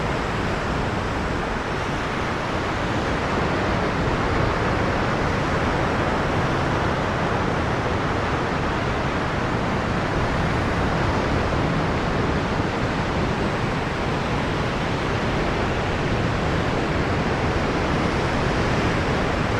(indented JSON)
{
  "title": "waves of Nida, strong surf",
  "date": "2011-11-10 14:30:00",
  "description": "waves of Nida sea and water sounds",
  "latitude": "55.30",
  "longitude": "20.97",
  "altitude": "1",
  "timezone": "Europe/Vilnius"
}